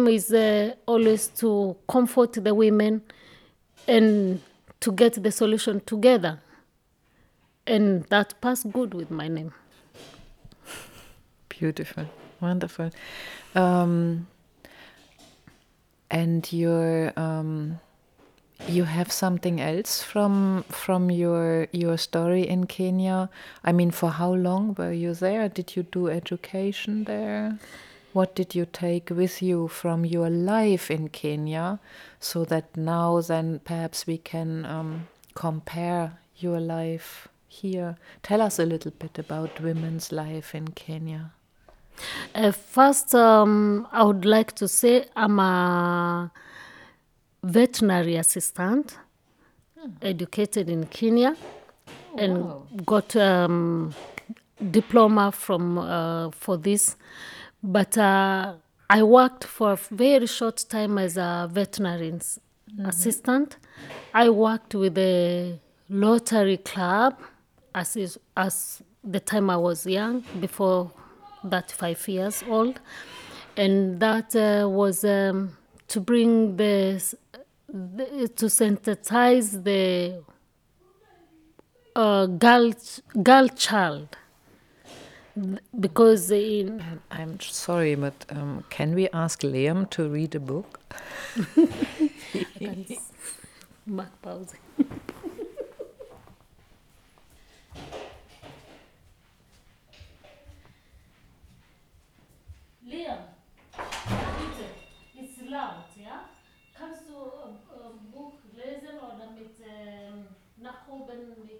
Hoetmar, Germany - Wacuka - Who i am goes well with my name...
It's only quite recently that Maryann relocated from town to the Germany countryside... a good moment to reflect together with her on her journey from her native Kenya to Germany ... and to her present life and work...
"who i am goes well with my name..." Maryann explains as if in summarizing her life. "Wacuka" in kikuyu, means the one who is well taken care of and, the one who is taking care, the carer...
Kreis Warendorf, Nordrhein-Westfalen, Deutschland, 23 October 2020